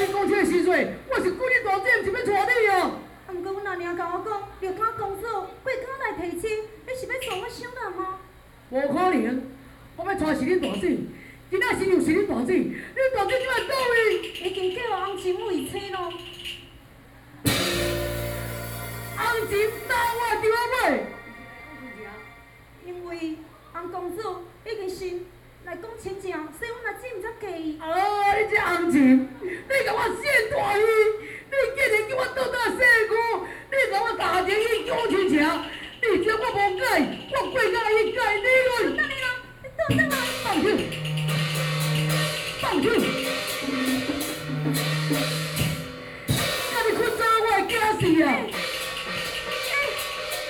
Beitou, Taipei - Taiwanese Opera
Taiwanese Opera, Zoom H4n + Soundman OKM II
北投區, 台北市 (Taipei City), 中華民國, 21 July 2013, 21:05